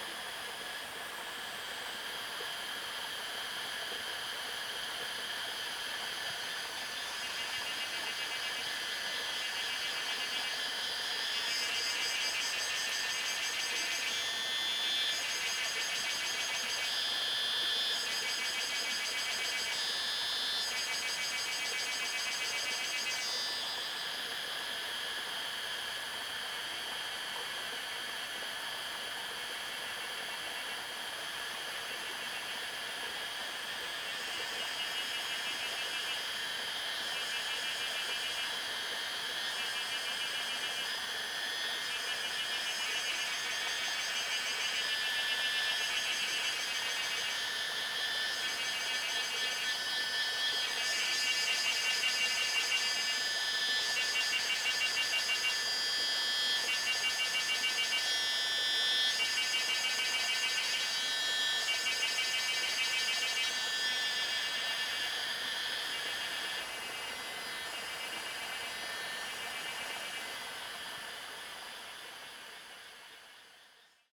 種瓜坑溪, 成功里, Nantou County - River and Cicada sounds
River sound, Cicada sounds, Faced woods
Zoom H2n MS+XY
18 May 2016, ~13:00